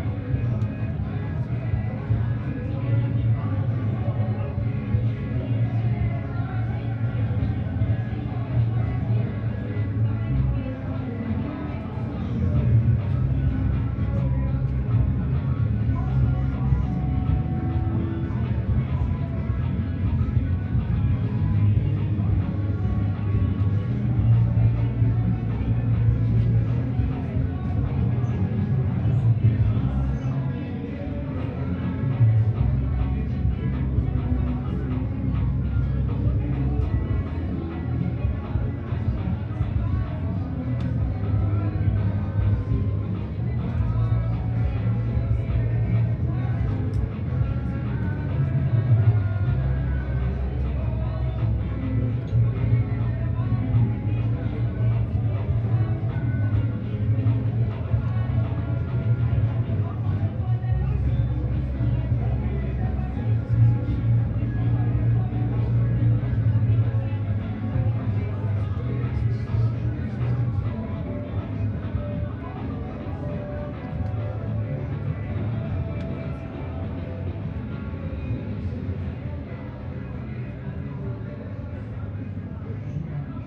Marina Göcek, Turkey - 918a multiple parties in marina
Recording of multiple parties in the marina after midnight.
AB stereo recording (17cm) made with Sennheiser MKH 8020 on Sound Devices MixPre-6 II.